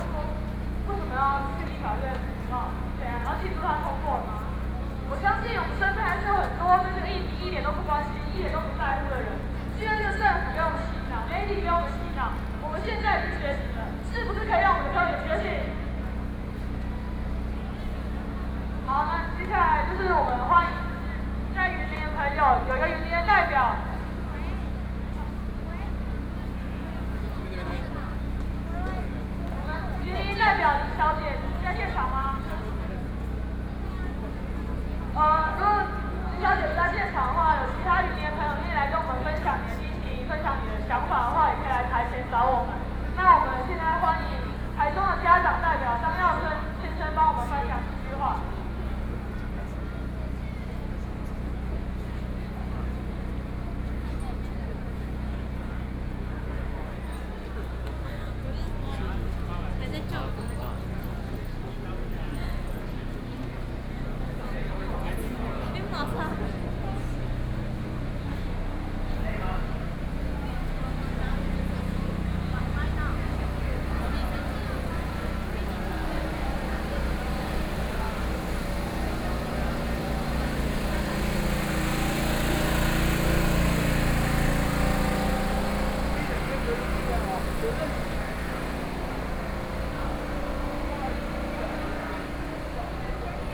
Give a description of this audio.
Walking through the site in protest, People and students occupied the Legislature, Binaural recordings